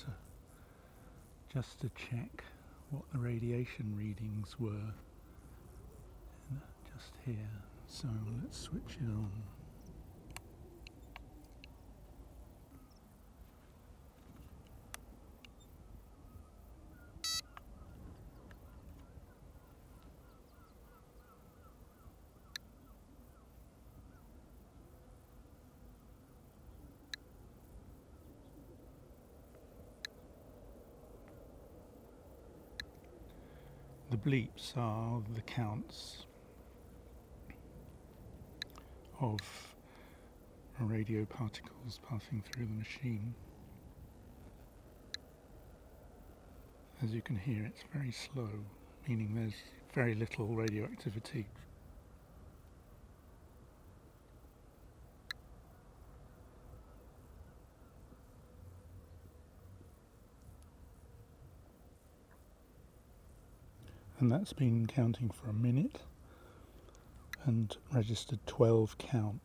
Nuclear power stories: Geiger counter bleeps, light at night, WXCF+WP Romney Marsh, UK - Nuclear power stories: Geiger counter bleeps, light at night
I was interested to measure the radioactivity in the vicinity of the nuclear power station. It turned out that the Geiger counter gave a lower reading (12 cnts/min) here than at home in London or Berlin (20cnts/min). At night the power station is lit like a huge illuminated ship in the darkness. This light has had impacts on the local wildlife.